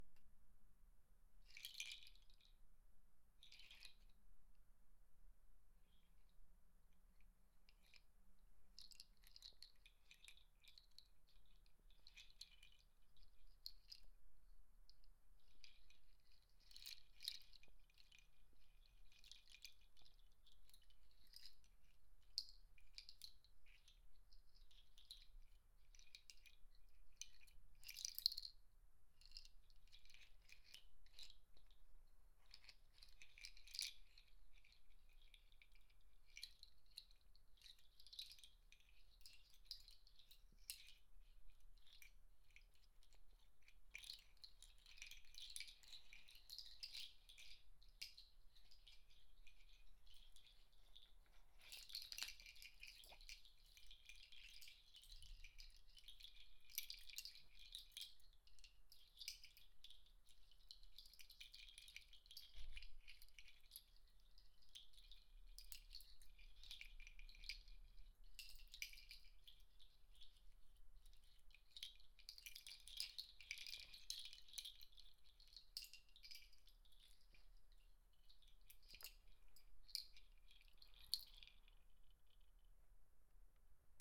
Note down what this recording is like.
Playing with a rain nut bracelet, Recorded with a Tascam DR 40